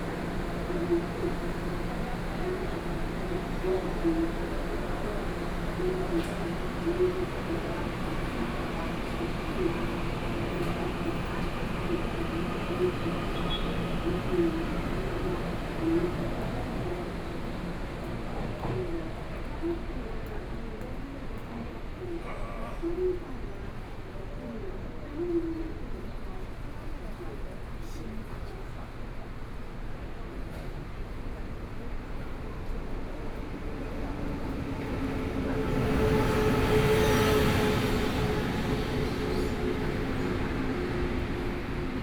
On the platform waiting for the train, Message broadcasting station, Sony PCM D50 + Soundman OKM II